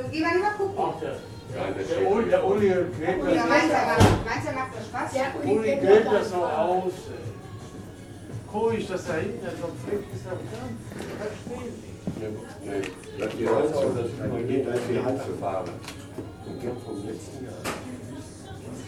herne-horsthausen - an der stadtgrenze
an der stadtgrenze
18 December 2009, 20:35